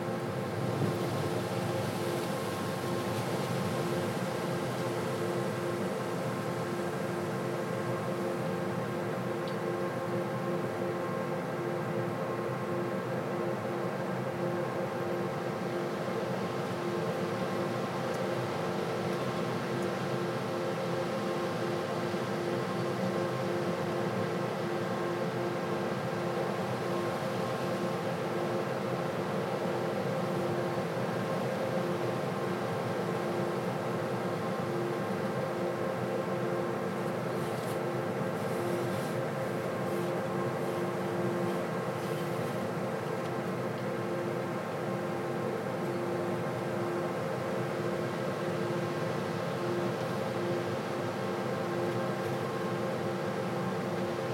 Neringos Lighthouse, Lithuania - Lighthouse Window
Recordist: Saso Puckovski. Close to the lighthouse maintenance room window. Random tourists walking around. Recorded with ZOOM H2N Handy Recorder.
Nida, Lithuania, 1 August 2016